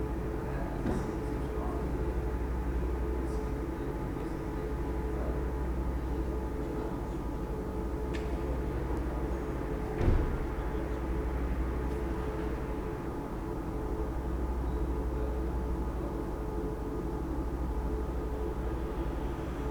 the city, the country & me: april 19, 2011
19 April 2011, ~01:00